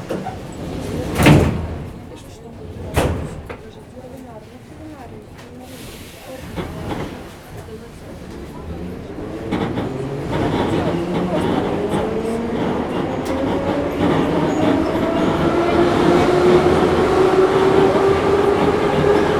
Metro. from north-west to center, Moscow, Russia - Station. Escalators, more trains far away that still sound close. Exit
After a few stops of which the distance is about 5 minutes, FULL SPEED, we reach one of the incredilbly grand and impressively decorated stations and leave the train. nonstop you hear new trains arriving and leaving while we are taking the endless escalator up to the daylight.